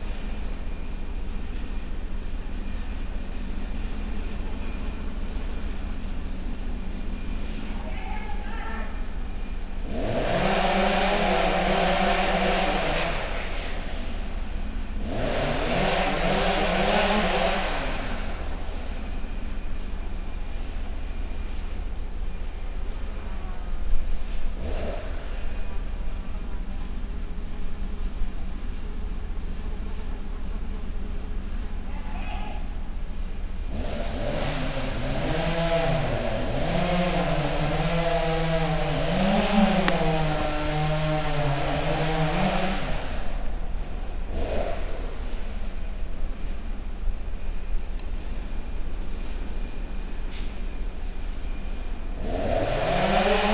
Krusvari, Chorwacja - forest works at a waterfall site
recorded at one of the waterfalls on the seven waterfalls trail near Buzet. chainsaw and lumberjack conversations (roland r-07)
Istarska županija, Hrvatska, 7 September